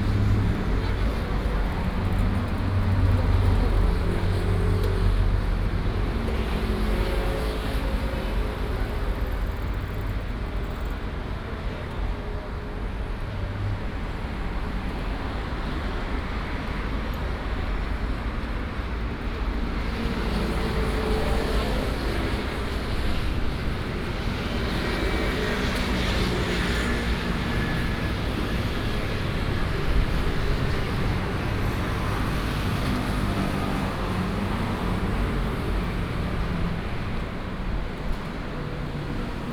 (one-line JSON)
{"title": "Gongguan Station, Taipei City - MRT station", "date": "2016-03-01 14:33:00", "description": "Outside MRT station, Go into the station, Traffic Sound", "latitude": "25.01", "longitude": "121.53", "altitude": "19", "timezone": "Asia/Taipei"}